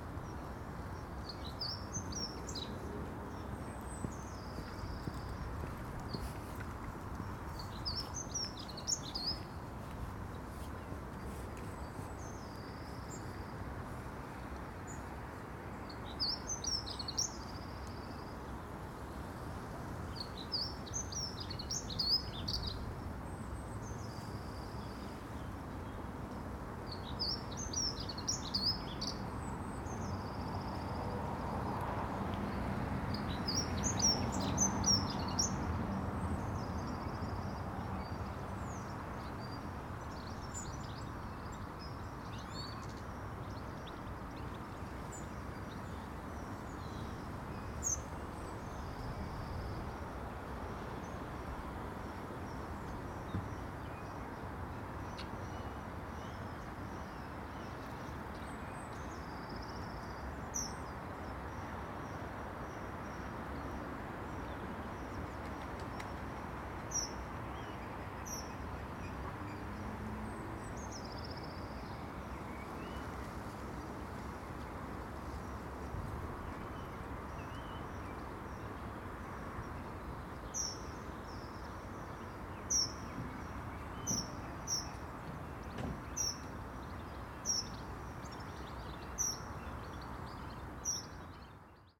Contención Island Day 47 outer northwest - Walking to the sounds of Contención Island Day 47 Saturday February 20th
The Drive Westfield Drive Parker Avenue Brackenfield Road Thornfield Road Northfield Road Salters Road Yetlington Drive
Three dog-walkers
and a walking jogger
A blackbird picks over leaves
underneath the bushes
behind me
a dunnock sings